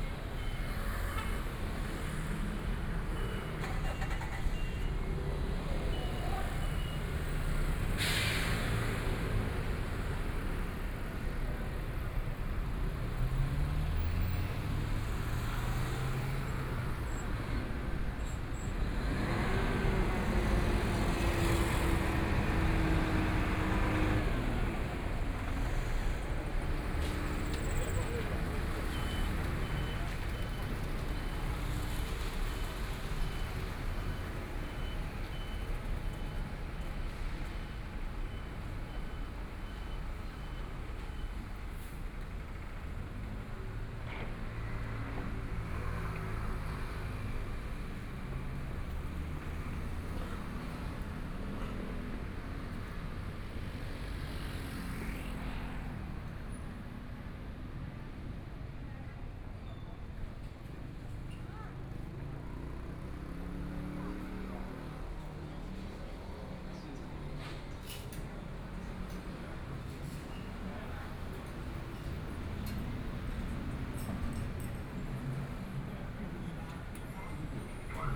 Walking on the road, Environmental sounds, Construction noise, Motorcycle sound, Traffic Sound, Binaural recordings, Zoom H4n+ Soundman OKM II
Taipei City, Taiwan, February 2014